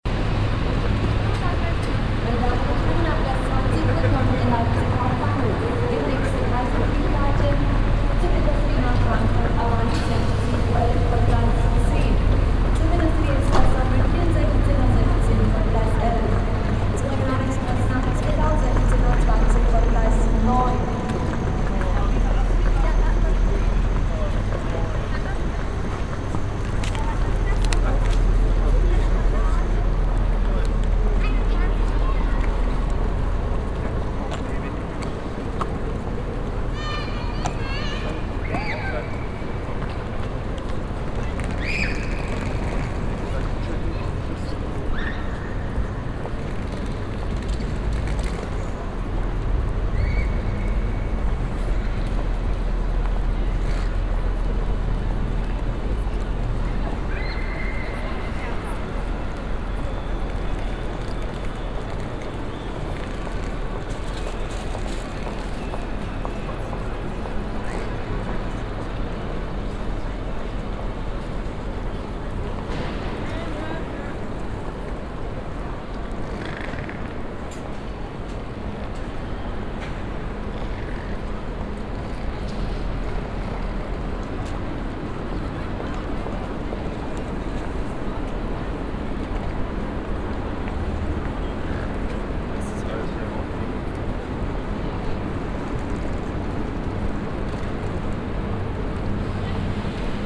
at leipzig main station, footwalk thru the station, anouncements and luggage roller
soundmap d: social ambiences/ in & outdoor topographic field recordings